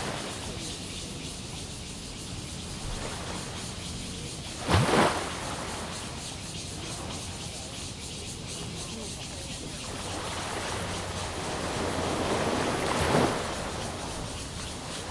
Before typhoon, Sound tide, Cicadas cry
Zoom H2n MS+XY
New Taipei City, Taiwan, 7 August, 18:16